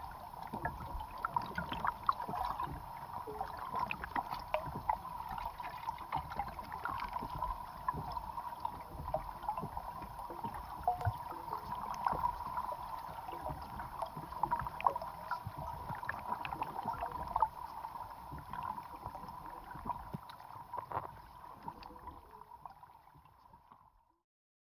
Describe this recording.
Hydrophone recording from the pier